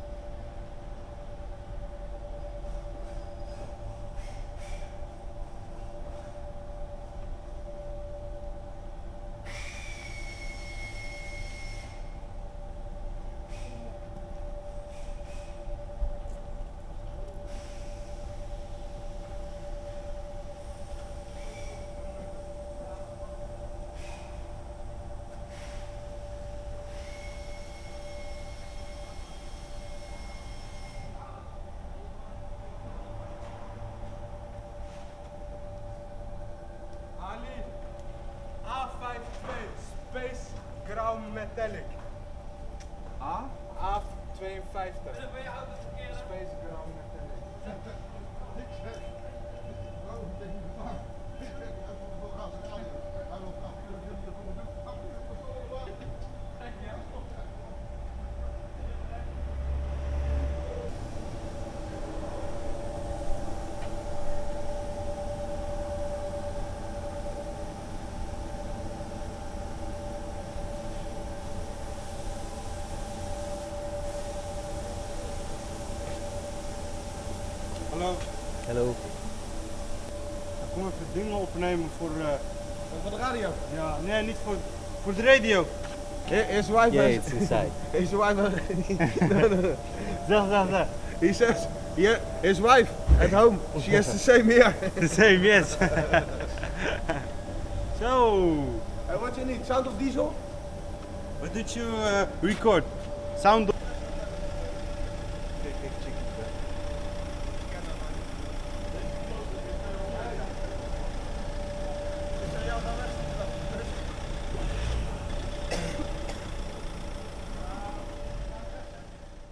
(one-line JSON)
{"title": "Binckhorst, Laak, The Netherlands - Sounds of the carrossery workshop", "date": "2012-05-24 13:16:00", "description": "Sounds of the workshop (body repair for car). After having lunch, the mechanics are picking up their work again. From outside of the workshop the sounds of the machines and the workers chatting are blending together.\nXY recording (AT-8022 into fostex FR2-LE).\nBinckhorst Mapping Project.", "latitude": "52.07", "longitude": "4.35", "altitude": "2", "timezone": "Europe/Amsterdam"}